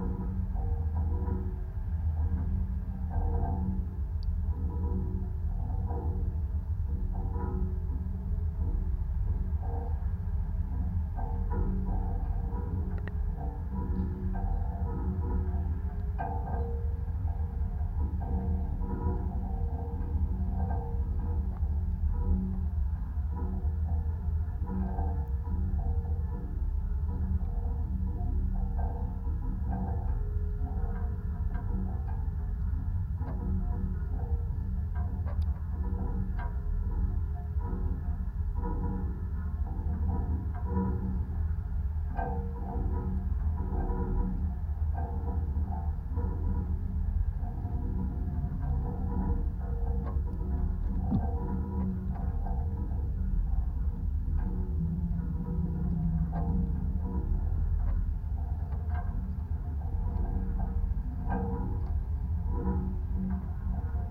Vyžuonos, Lithuania, study of high voltage pole
contact microphones on high voltage pole's support wire and earthing wire. day is quite windy so there are a lot of sounds.
Utenos rajono savivaldybė, Utenos apskritis, Lietuva, 1 November 2019, 15:15